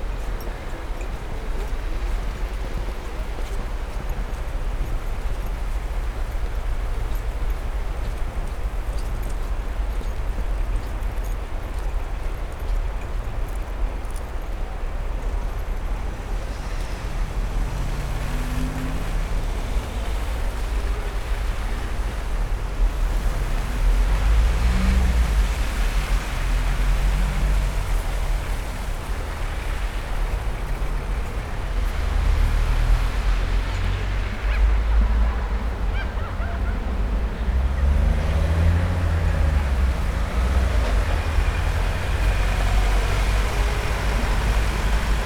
Innstraße, Innsbruck, Österreich - winter/schnee in st. nikolaus